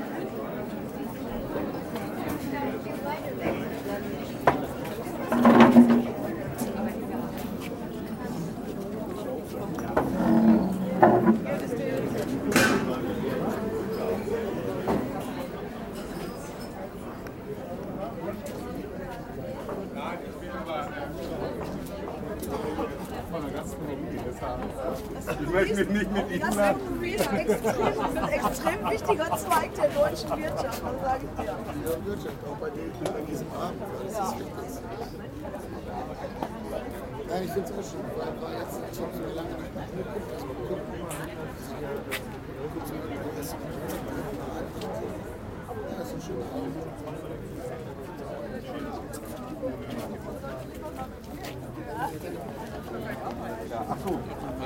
schauspielhaus bochum, kammerspiele, audience
audience waiting for the drop curtain to be drawn, musicians doing their warming up. "kammerspiele" (i. e. the intimate theatre of the bochum schauspielhaus).
recorded june 23rd, 2008 before the evening show.
project: "hasenbrot - a private sound diary"
Bochum, Germany